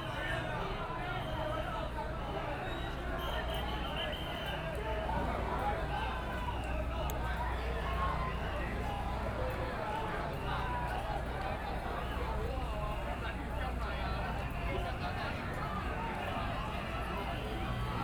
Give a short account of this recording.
government dispatched police to deal with students, Protest, University students gathered to protest the government, Occupied Executive Yuan, Riot police in violent protests expelled students, All people with a strong jet of water rushed, Riot police used tear gas to attack people and students